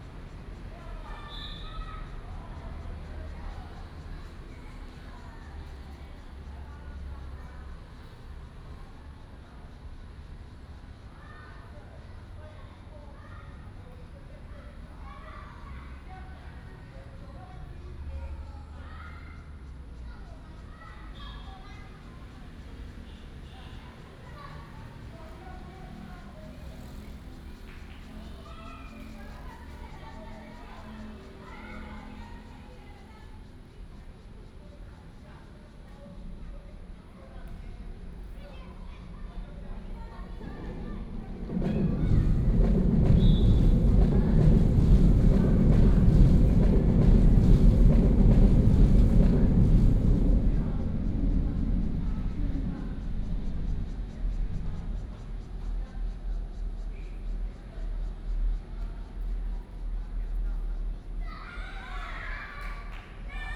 Sec., Beitou Rd., Beitou Dist., Taipei City - MRT train sounds
under the track, MRT train sounds
Please turn up the volume a little. Binaural recordings, Sony PCM D100+ Soundman OKM II